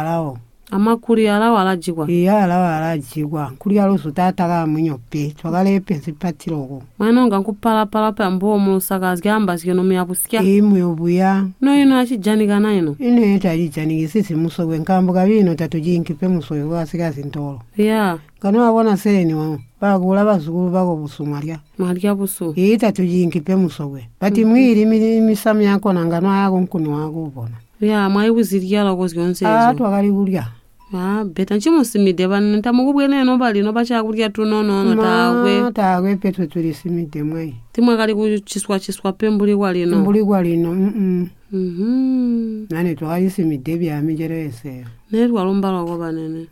Chinonge, Binga, Zimbabwe - Banene, what are the bush fruits you used to eat...
Eunice asks her grandmother about how the BaTonga used to survive in the bush after their forceful removal from the fruitful land of their ancestors at the Zambezi. Her grandmother lists some of the bush fruits they used to collect like Makuli or Mutili and describes how they used to prepare them for dishes...
a recording from the radio project "Women documenting women stories" with Zubo Trust.
Zubo Trust is a women’s organization in Binga Zimbabwe bringing women together for self-empowerment.